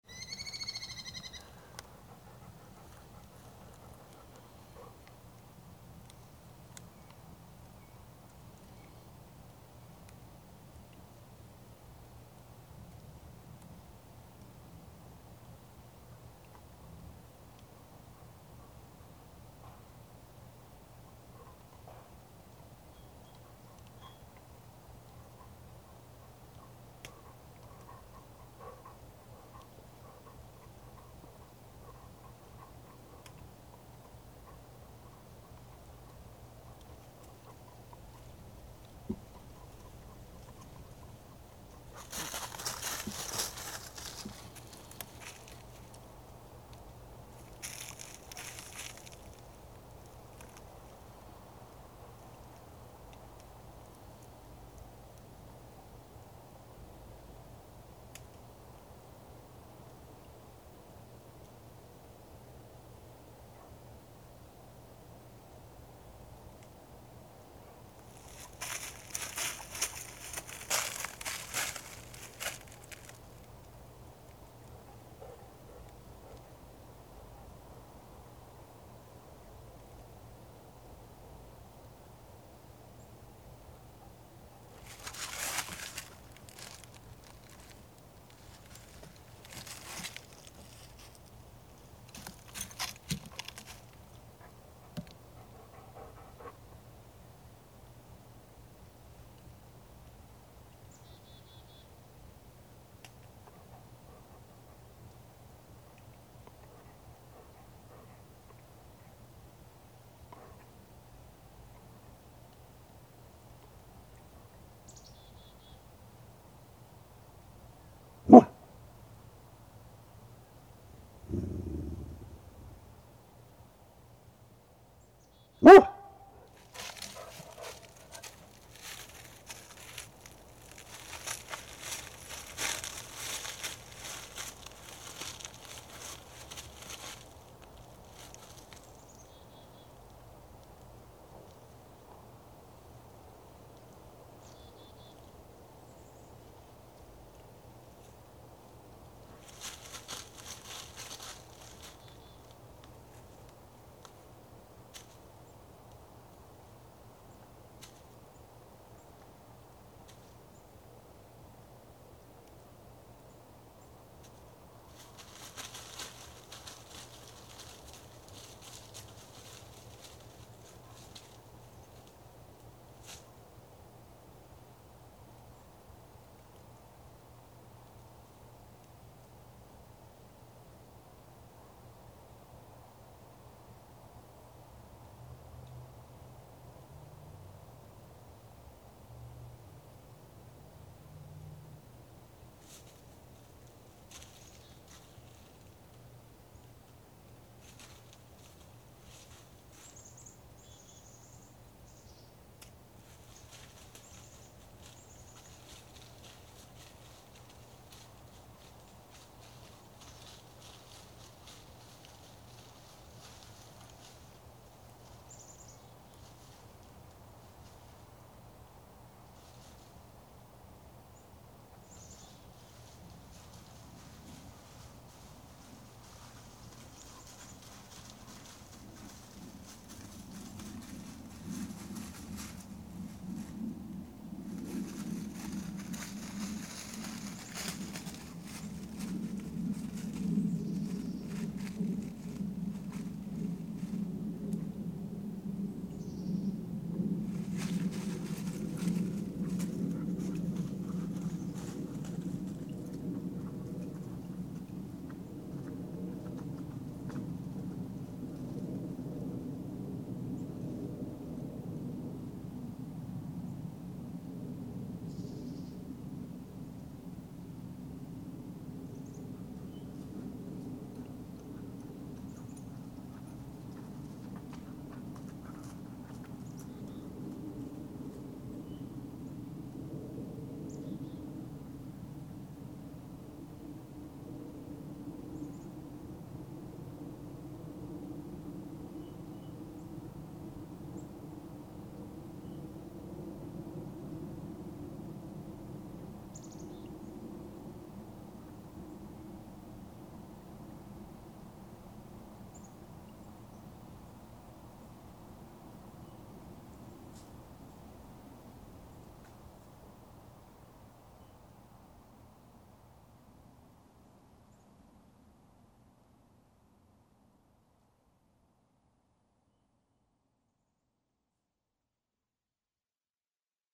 Three Pines Rd., Bear Lake, MI, USA - Early Spring Survey
Emma surveys a yard now free of snow but still covered in last fall's leaves. After noticing a fluttering, battered piece of shrink-wrap a few doors down, she sounds the alarm (the entire road is an extension of her yard, especially during the quiet winter). Also chickadees and a plane overhead. Stereo mic (Audio-Technica, AT-822), recorded via Sony MD (MZ-NF810, pre-amp) and Tascam DR-60DmkII.